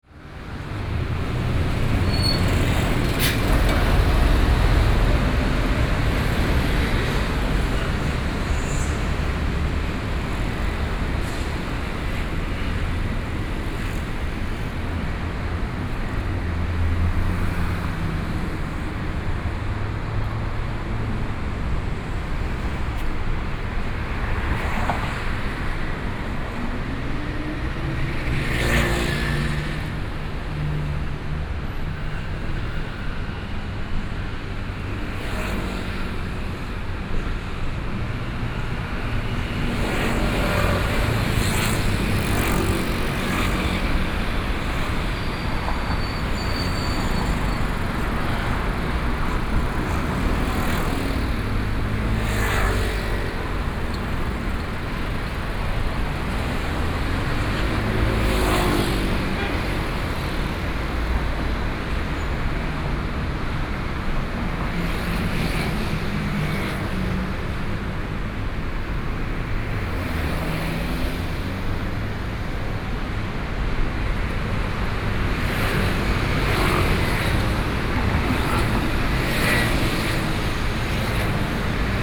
Civic Blvd., Taipei City - Traffic Sound
Traffic Sound
Binaural recordings
Sony PCM D100+ Soundman OKM II
2 May 2014, 11:54